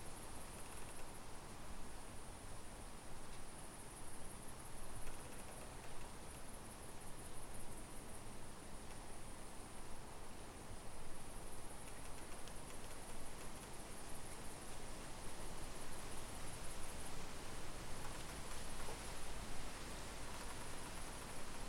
Rue de Beauregard, Saint-Bonnet-le-Chastel, France - Scary field recording
Wind, bells, tree craking, strange noises in the evening in the forest. I had to leave I was so scared.